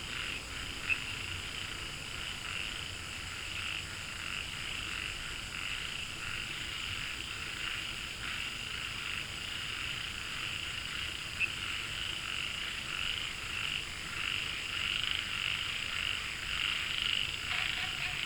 茅埔坑溼地, 南投縣埔里鎮桃米里 - Frogs chirping
Frogs chirping, In Wetland Park